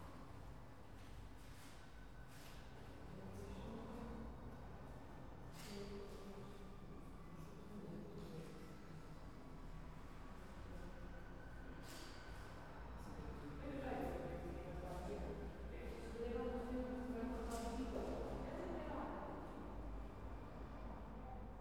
Mir, Belarus, entering the church
1 August 2015